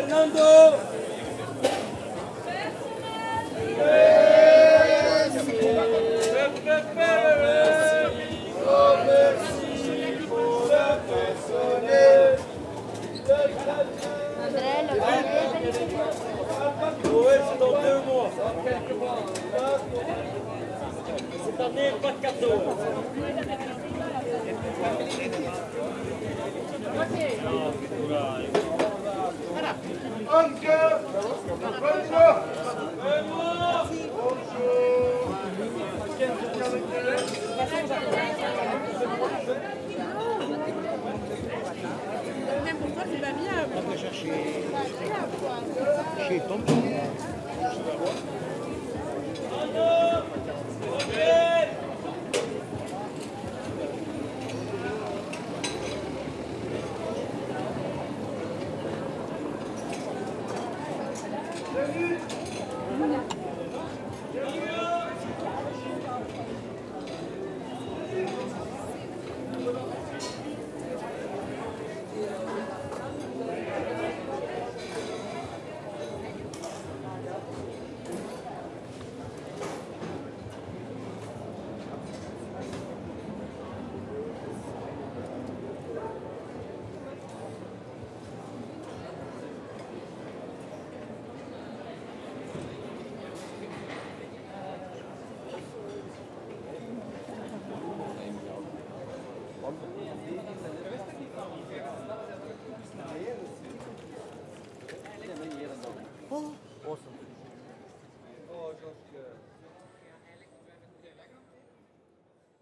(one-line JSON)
{"title": "Bruxelles, Belgium - Sainte-Catherine district", "date": "2018-08-25 14:50:00", "description": "The very lively area of the Sainte-Catherine district in Brussels. In first, gypsies playing accordion near the restaurant terraces. After, the Nordzee / Mer du Nord restaurant, where a lot of people eat mussels and white wine. There's so much people that the salespersons shout and call the clients. This day everybody is happy here !", "latitude": "50.85", "longitude": "4.35", "altitude": "18", "timezone": "GMT+1"}